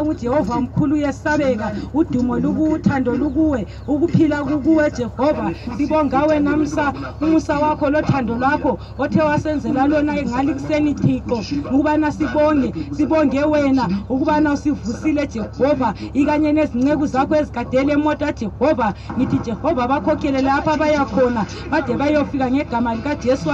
Entumbane Rank, Bulawayo, Zimbabwe - Binga bus leaving Entumbane rank
...the bus is still filled with traders when it starts leaving the rank… the driver reminds that they have to leave… and soon is the last change to drop out… one passenger says a prayer… and off we go on a 6 – 8 hours journey to “the back of beyond”…
(...the mic is an unusual feature… I think it’s the only time in my many journeys that I heard it functioning...)
mobile phone recording